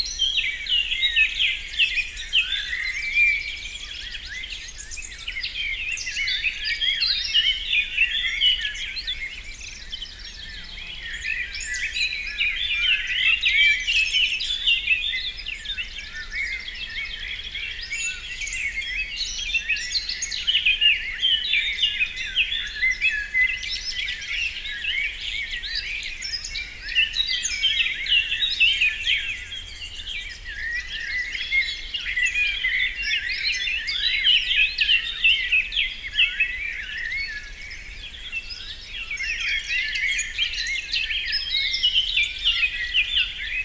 Unnamed Road, Kostanjevica na Krasu, Slovenia - Birds in the forest